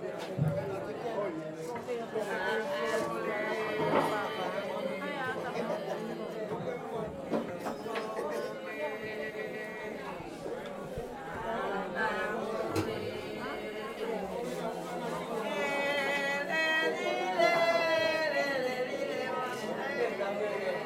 San Basilio Del Palenque, Bolivar, Colombia - Lumbalu Evaristo Marquez
Last night of the funeral ritual celebrated in San Basilio de Palenque.
Zoom H2n inner microphones
XY mode, head's level
15 July 2013